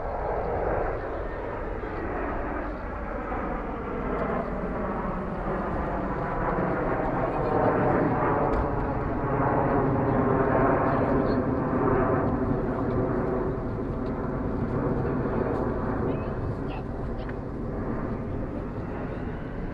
{"title": "Cerny, Aérodrome, La Patrouille de France", "date": "2011-06-11 17:57:00", "description": "Frznce, Aérodrome Jean Baptiste Salis, aeronef, aeroplane, binaural", "latitude": "48.50", "longitude": "2.33", "altitude": "136", "timezone": "Europe/Paris"}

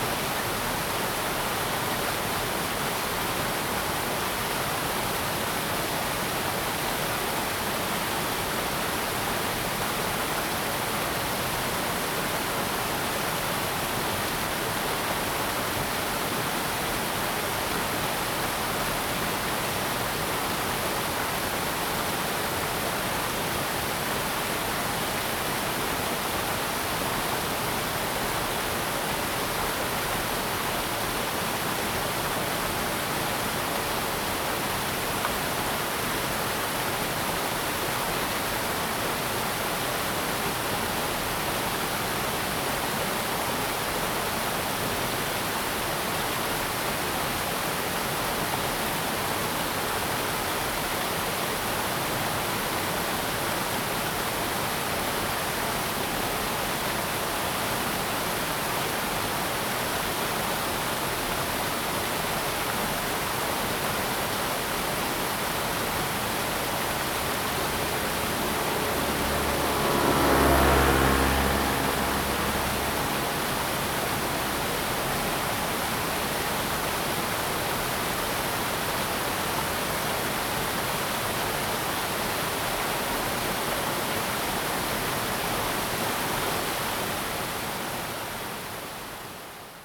{"title": "Zhonggua Rd., Puli Township - Streams and traffic sound", "date": "2016-04-26 13:28:00", "description": "Streams and traffic sound\nZoom H2n MS+XY", "latitude": "23.95", "longitude": "120.91", "altitude": "576", "timezone": "Asia/Taipei"}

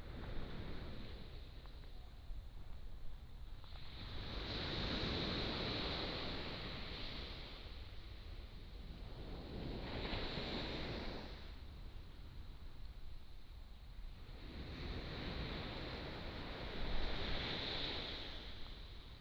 {"title": "雙口, Lieyu Township - Birds and the waves", "date": "2014-11-04 10:18:00", "description": "At the beach, Birds singing, Sound of the waves", "latitude": "24.44", "longitude": "118.23", "altitude": "4", "timezone": "Asia/Shanghai"}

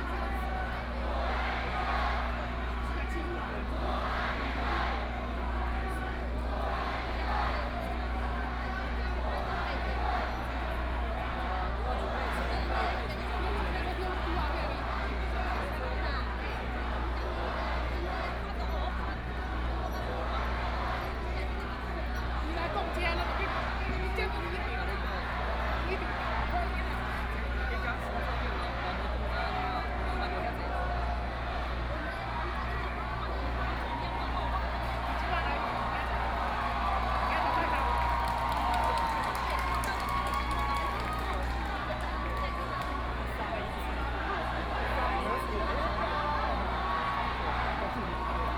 {"title": "中正一分局, Taipei City - ' Passing ' protests", "date": "2014-04-11 18:51:00", "description": "A lot of students and people in front of the police station to protest police unconstitutional, Traffic Sound, Students and people hands in the air and surrounded by riot police, Protest against police chief", "latitude": "25.04", "longitude": "121.52", "altitude": "18", "timezone": "Asia/Taipei"}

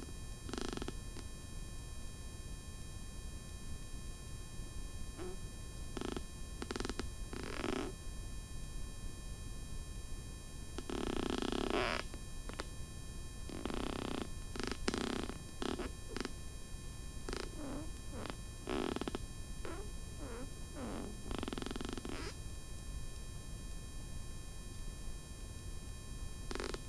{"title": "workum, het zool: marina, berth h - the city, the country & me: marina, sailing yacht, fender", "date": "2011-07-09 15:05:00", "description": "contact mic on fender\nthe city, the country & me: july 9, 2011", "latitude": "52.97", "longitude": "5.42", "altitude": "1", "timezone": "Europe/Amsterdam"}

